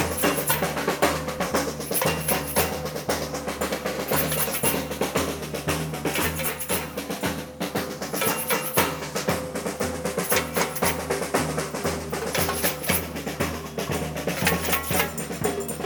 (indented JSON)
{
  "title": "Maintenon, France - Outdoor candles parade",
  "date": "2018-07-13 23:00:00",
  "description": "Children walking in the streets, during an outdoor-candles parade. Children are very proud and happy ! Sorry for the span, I didn't think about it.",
  "latitude": "48.59",
  "longitude": "1.58",
  "altitude": "100",
  "timezone": "Europe/Paris"
}